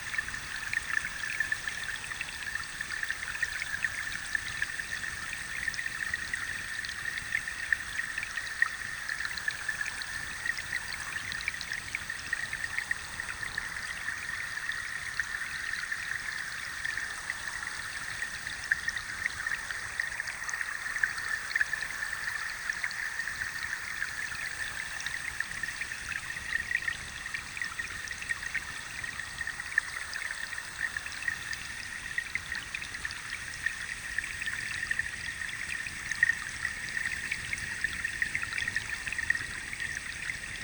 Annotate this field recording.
Hydrophone recording of the Rokytka river. The recording became a part of the sound installation "Stream" at the festival M3 - Art in Space in Prague, 2019